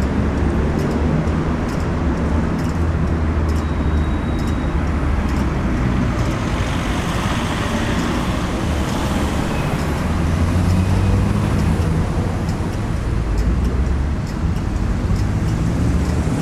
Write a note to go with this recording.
Traffic and clicking traffic lights during sound walk